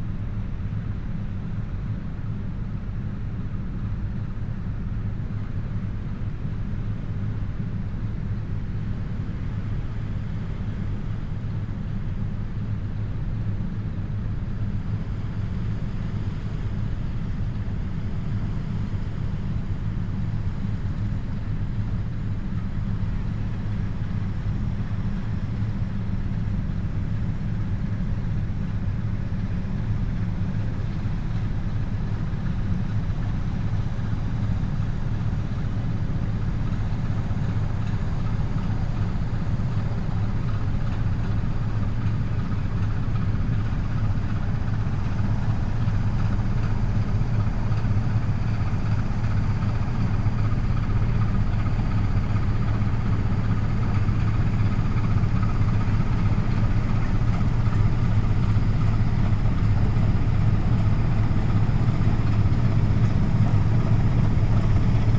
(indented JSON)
{
  "title": "Hermann-Schneider-Allee, Karlsruhe, Deutschland - cargo ship upstream - Frachter bergwärts",
  "date": "2011-11-02 15:00:00",
  "description": "A sunny afternoon near the river Rhine.\nEquipment: Tascam HD-P2; AKG SE 300B / CK91\nRecording: ORTF",
  "latitude": "49.00",
  "longitude": "8.29",
  "altitude": "106",
  "timezone": "Europe/Berlin"
}